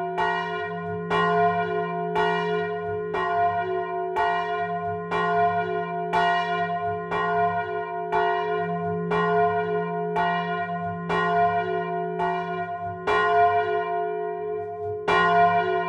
Ctr de l'Église, Mametz, France - église St-Vaast de Mametz - Pas-de-Calais - 12h+Angélus
église St-Vaast de Mametz - Pas-de-Calais
Une seule cloche - 12h+Angélus
"Cette cloche a été fondue le 14 juillet 1862 et bénite solennellement sous l’administration de Messieurs Chartier Prosper maire de la commune de Mametz département du Pas de Calais et Scat Jean-Baptiste Adjoint. Monsieur l’abbé Delton, Amable Jean-Baptiste desservant la paroisse de ladite commune.
Elle a reçu les noms de Félicie Marie Florentine de ses parrain et Marraine Monsieur Prisse Albert Florian Joseph attaché au Ministère des Finances et Madame Chartier Prosper née Félicie Rosamonde Lahure."